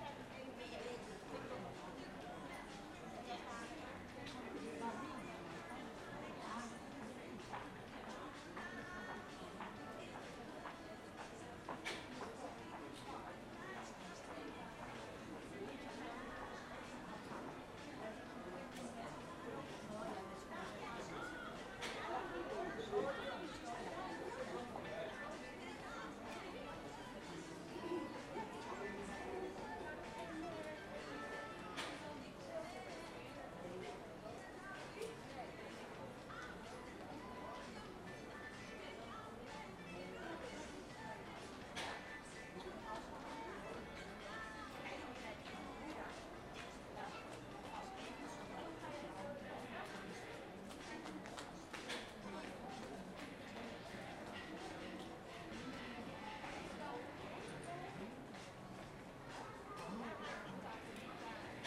Hoog-Catharijne CS en Leidseveer, Utrecht, Niederlande - passage muzak
walking left from the entrance a rather silent passage if there were not the music playing